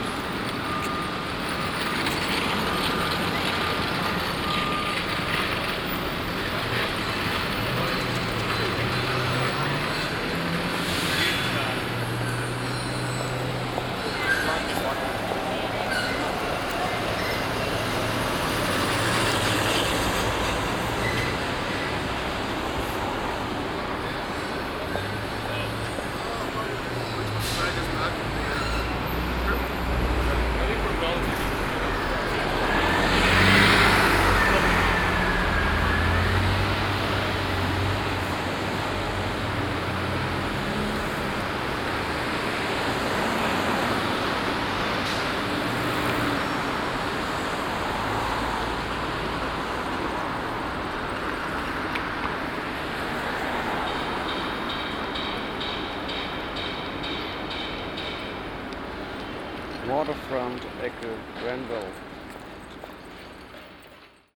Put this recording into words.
a streetworker cleaning the street with a broom, traffic passing by, in the distance a heavy street construction going on, soundmap international, social ambiences/ listen to the people - in & outdoor nearfield recordings